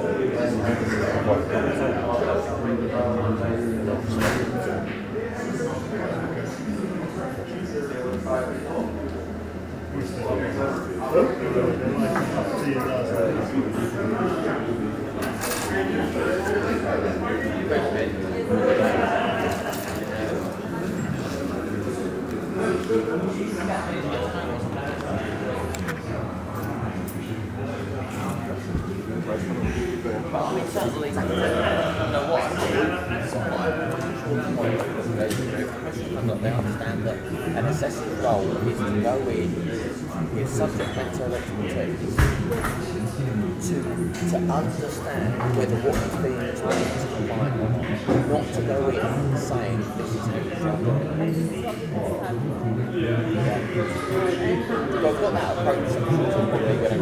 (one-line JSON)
{"title": "Diegem, Machelen, Belgium - at the hotel hall", "date": "2012-10-25 21:00:00", "description": "holiday Inn hotel: wlking around: hall, bar, snooker pool and exit. multlingual environment", "latitude": "50.88", "longitude": "4.44", "altitude": "47", "timezone": "Europe/Brussels"}